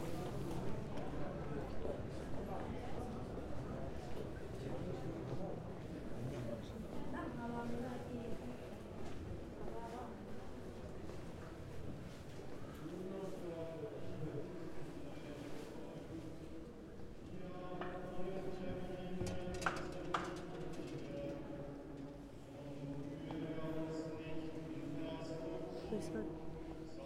Stephansdom, Wien, Austria - St. Stephen's Cathedral